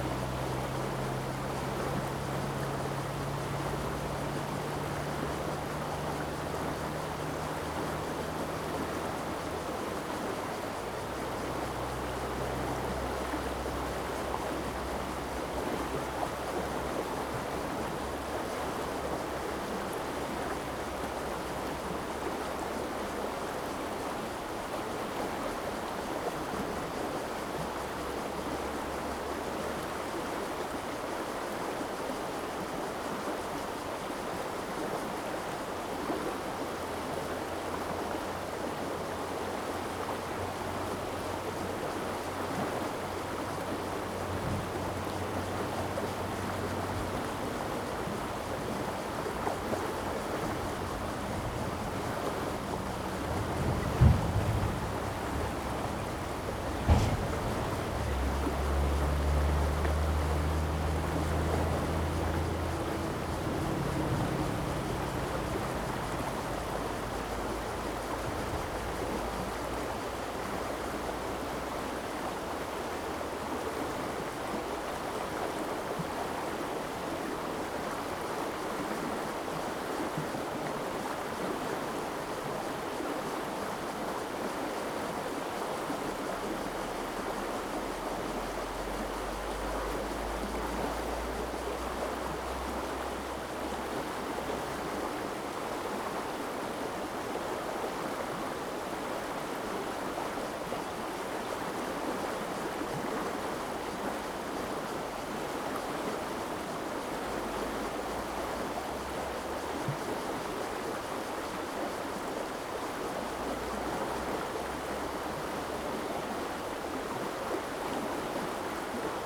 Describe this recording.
Cicadas sound, Traffic Sound, Stream, Very hot weather, Zoom H2n MS+ XY